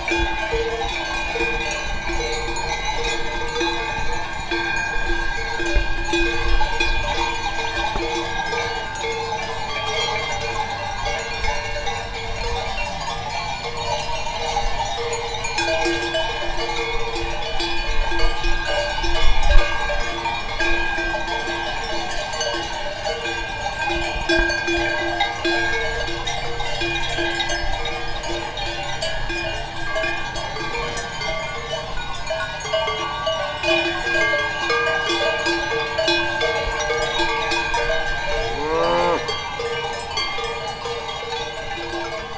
Lotissement les Arbussiers, La Chapelle-en-Vercors, Frankrijk - Cowbells
Walking for 14 days in the mountains of Vercors, this cowbells sound like music. (Recorded with Zoom 4HN)
La Chapelle-en-Vercors, France